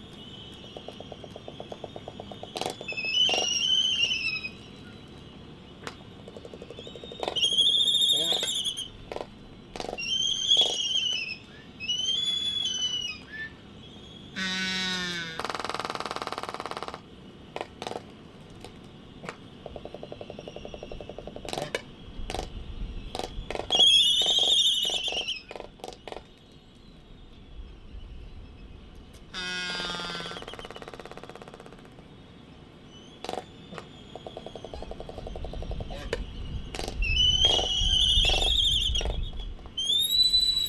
United States Minor Outlying Islands - Laysan albatross dancing ...
Sand Island ... Midway Atoll ... laysan albatross dancing ... Sony ECM 959 one point stereo mic to Sony Minidisk ... background noise ...